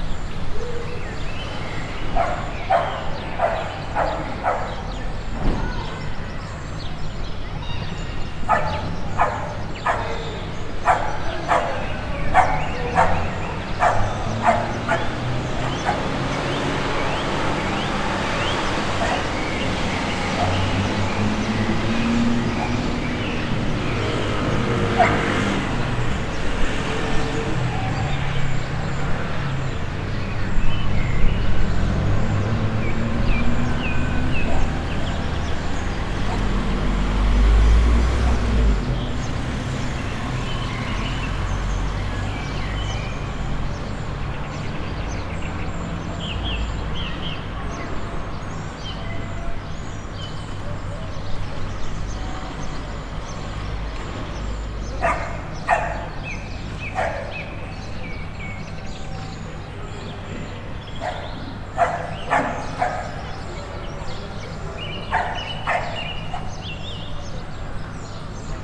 Amara Kalea, Donostia, Gipuzkoa, Espagne - Amara Kalea
atmosphere of the street 5th floor, barking dog, bells
Captation ZOOM H6